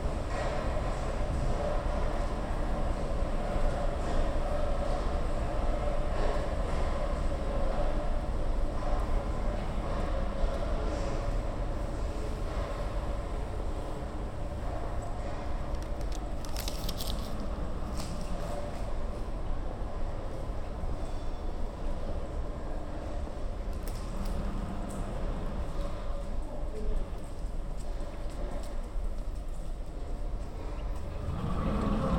Subway T Mariatorget, West entrance. Walking around in the ticket hall.